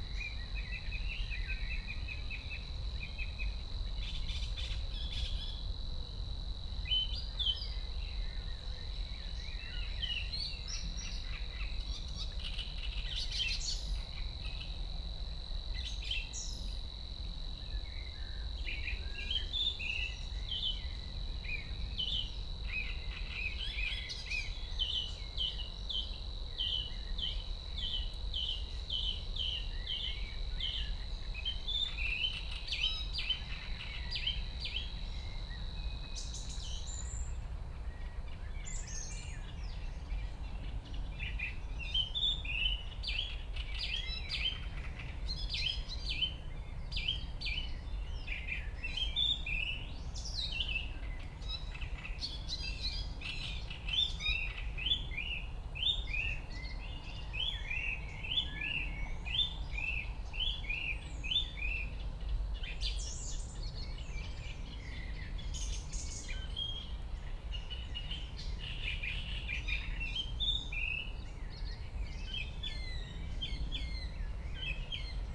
Moorlinse, Buch, Berlin - bird chorus, evening anbience
place revisited on a spring evening, elaborated soundscpae mainly because of the birds: Song thrush, Great reed warbler, Savi's warbler (german: Singdrossel, Drosselrohsänger, Rohrschwirl) and others, low impact of the nearby Autobahn
(Sony PCM D50, DPA4060)